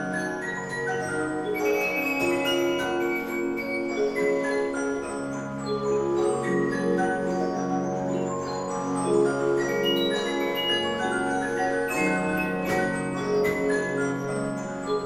Ellsworth County Museum, W South Main St, Ellsworth, KS, USA - Hodgden House Music Box
An antique Olympia music box plays the ragtime tune, Smokey Mokes Cake-Walk and Two-Step (1899) by Abe Holzmann. Occasional hand-cranking by head staffer Bea Ramsey. After she lets the disc work its way to the start of the melody, it plays two and a half times. Recorded in the Hodgden House, a former residence, now part of the museum complex. Stereo mics (Audiotalaia-Primo ECM 172), recorded via Olympus LS-10.
31 August 2017, ~16:00